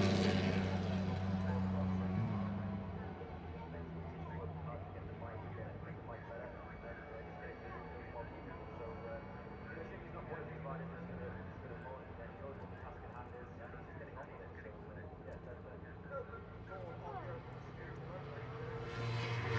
Unnamed Road, Derby, UK - british motorcycle grand prix 2005 ... moto grand prix ... ...
british motorcycle grand prix 2005 ... moto grand prix qualifying ... one point sony stereo mic to minidisk ...
23 August 2005, 14:00